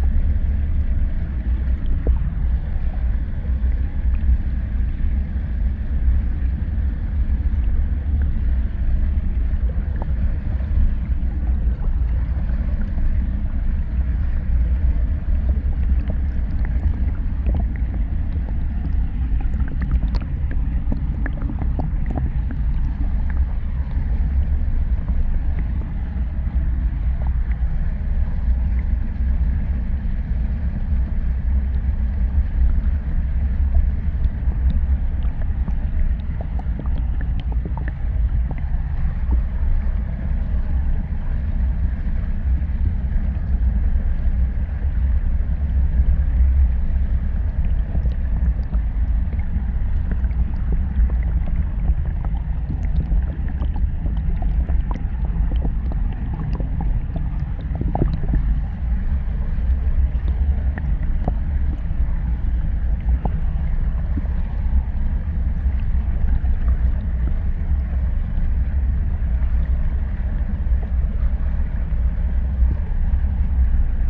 Utena, Lithuania, tiny ice drone
I have alreadyrecorded on this spot several times. But now there's newly built road above and some large pipe under it. The stinky waters flows through it and forms kind of little waterfall covered with ice. I placed a pair contact mics on this tiny ice...